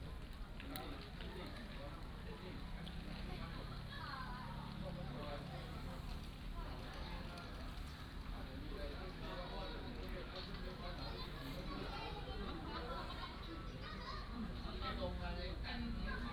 大福村, Hsiao Liouciou Island - Next to the fishing port
Next to the fishing port
Liouciou Township, Pingtung County, Taiwan, 1 November 2014